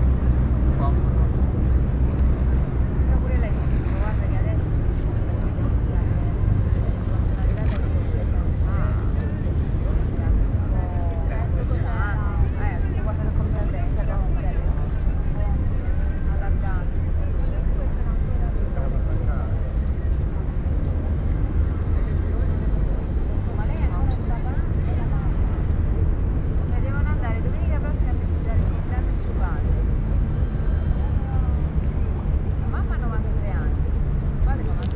molo Audace, Trieste, Italy - bench
evening sea hearers / seerers, spoken words
5 September 2013